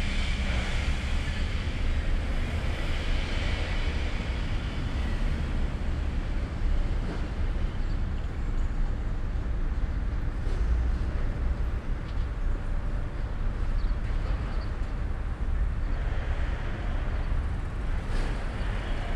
industrial ambience at Oberhafen, Neukölln, between srapyard and public cleansing service building
(Sony PCM D50, DPA4060)
30 May 2013, 14:45